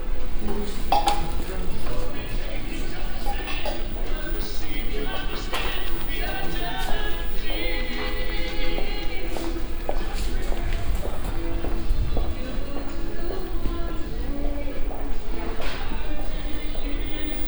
kamen, kamen karree, swedish furniture house
at the the cash area of a swedish furniture house - cash, shopping waggons and muzak
soundmap nrw - social ambiences and topographic field recordings
19 April, 12:02